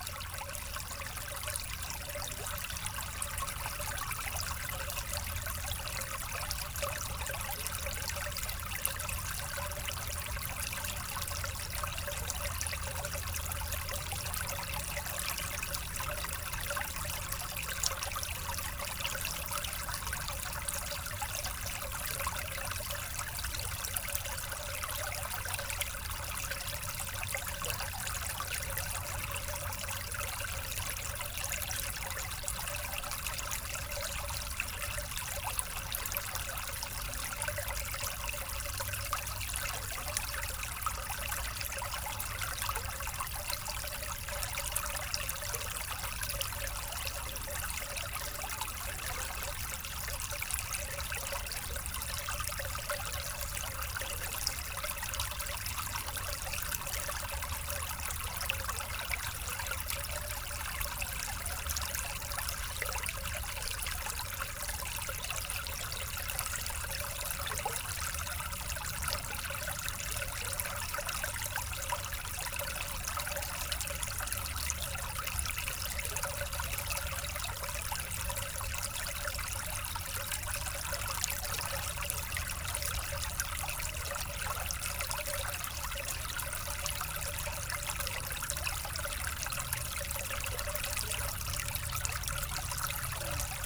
The Ry de Beaurieux is a small stream flowing behind the houses. Access to this river is difficult.